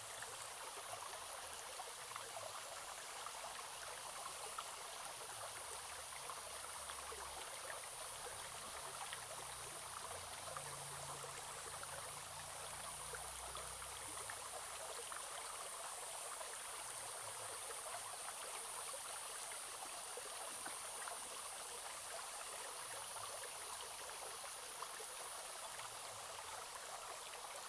種瓜坑溪, 成功里 - Stream sound
Small streams, In the middle of a small stream
Zoom H2n MS+ XY+Spatial audio